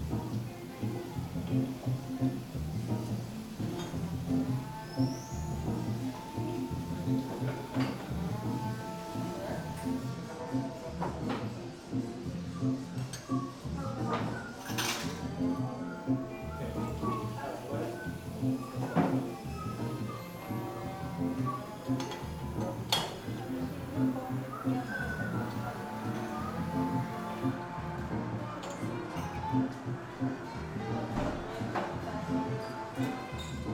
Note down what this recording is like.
cafe in jardim da estrela, closing time